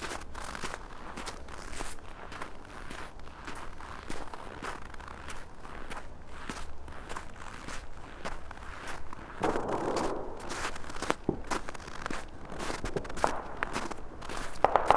path of seasons, Piramida, Maribor - silvester walk, snow